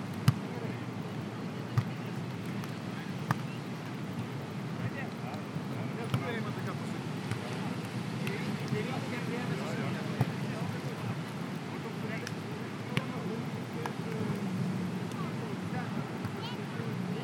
Neringos sav., Lithuania - Beach Volleyball
Recordist: Tornike Khutsishvili
Description: On the beach on a clear day. People talking, playing volleyball, waves and crickets in the background. Recorded with ZOOM H2N Handy Recorder.
29 July 2016